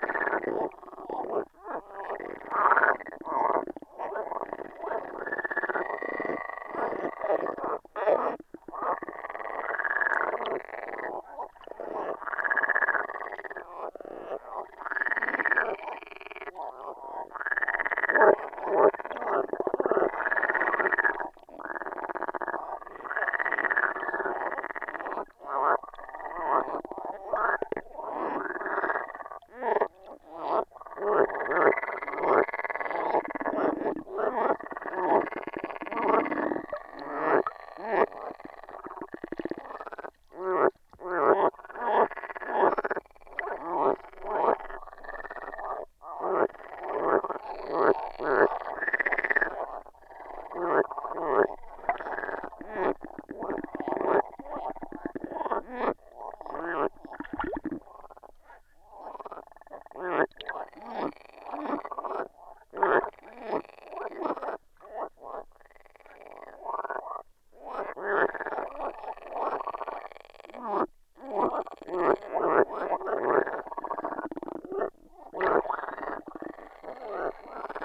Utena, Lithuania, frogs chorus on hydrophone
very special season of the year. green beasts are everywhere, so let's listen to their chorus. hydrophone recording.